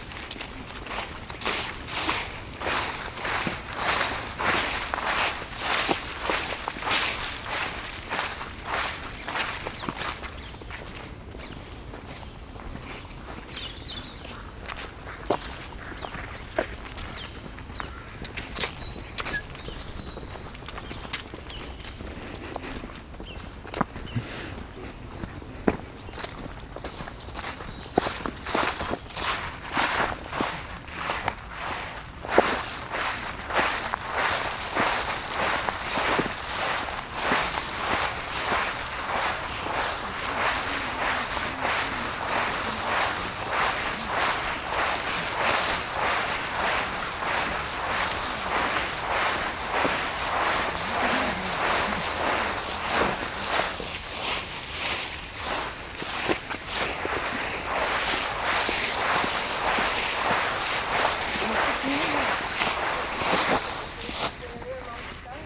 La Hulpe, Belgique - Dead leaves
Walking in a huge carpet of dead leave, in a marvellous forest.